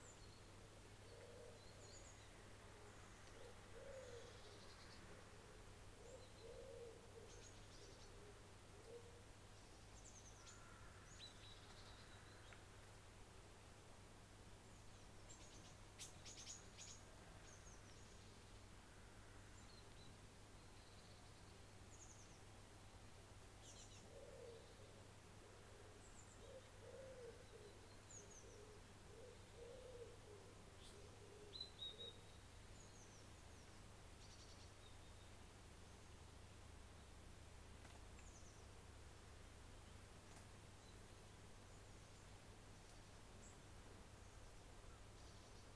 This recording was made using a Zoom H4N. The recorder was positioned on the jetty of the lake at Embercombe. Embercombe is one of the core rewilding sites in Devon Wildland, as well managing the land for nature it is a retreat centre. This recording is part of a series of recordings that will be taken across the landscape, Devon Wildland, to highlight the soundscape that wildlife experience and highlight any potential soundscape barriers that may effect connectivity for wildlife.